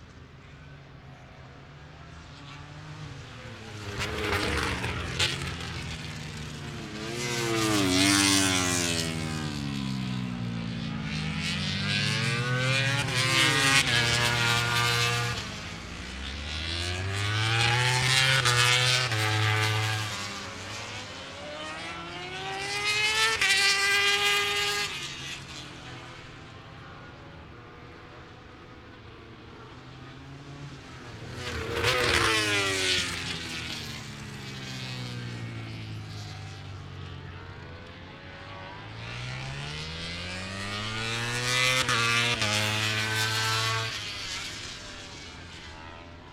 Donington Park Circuit, Derby, United Kingdom - British Motorcycle Grand Prix 2005 ... moto grandprix ...
British Motorcycle Grand Prix 200 ... free practice one ... part two ... one point stereo mic to minidisk ... the era of the 990cc bikes ...